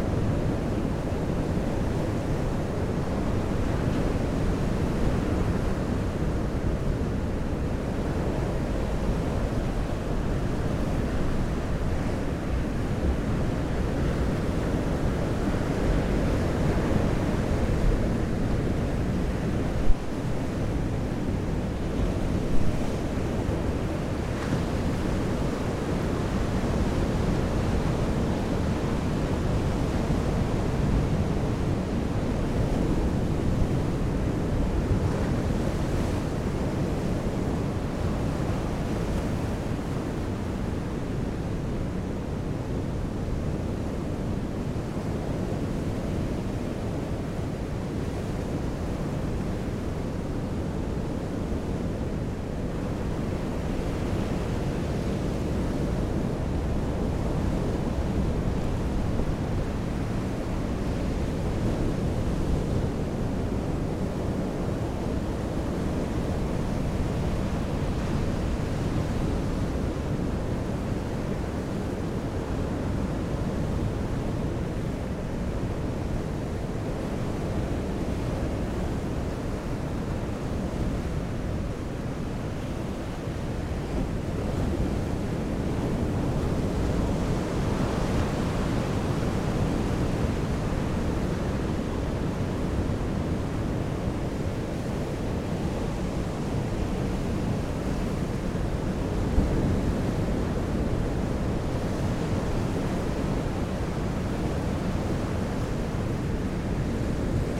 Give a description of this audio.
Voramar, Rode nt-5 (Omni) + Mixpre + Tascam Dr-680, With Jercklin "Disk" DIY